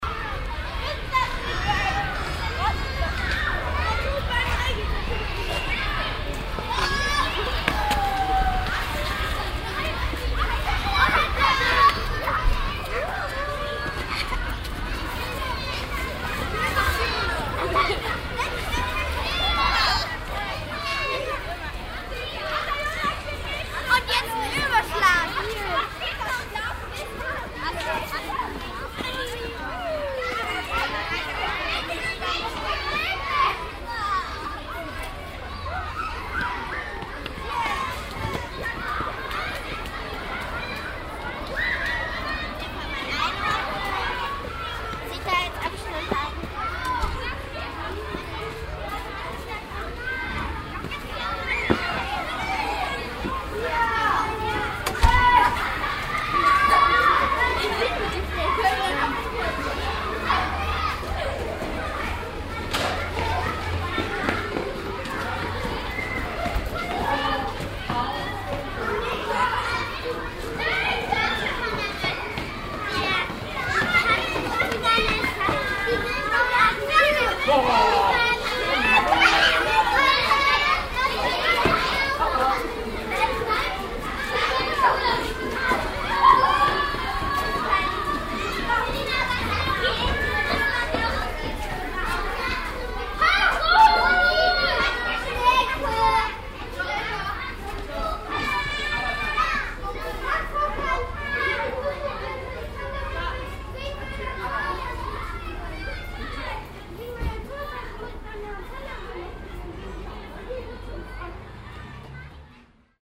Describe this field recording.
schüler auf pausenhof und in den schulgängen, project: : resonanzen - neanderland - social ambiences/ listen to the people - in & outdoor nearfield recordings